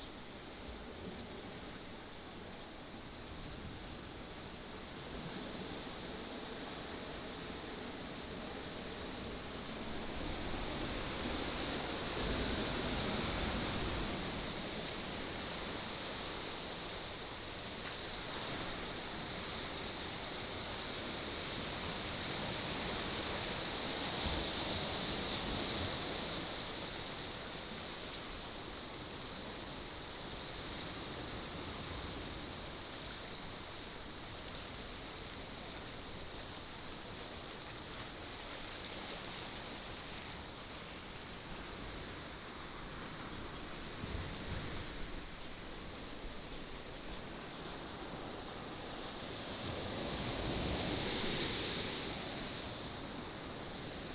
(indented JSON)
{
  "title": "梅石村, Nangan Township - sound of the waves",
  "date": "2014-10-14 15:04:00",
  "description": "sound of the waves, small village",
  "latitude": "26.15",
  "longitude": "119.94",
  "altitude": "92",
  "timezone": "Asia/Taipei"
}